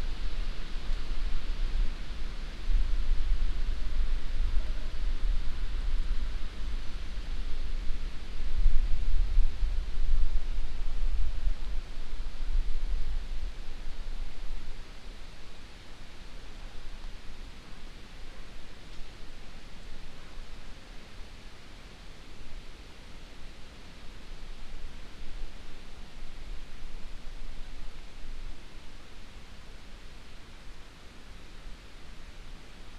Aukštadvario seniūnija, Litauen - Lithuania, countryside, devils hole
In the centre of a small but quite deep round valley entitled "devil's hole" that is surrounded by trees. The quiet sounds of leaves in the wind waves, insects and birds resonating in the somehow magical circle form.
international sound ambiences - topographic field recordings and social ambiences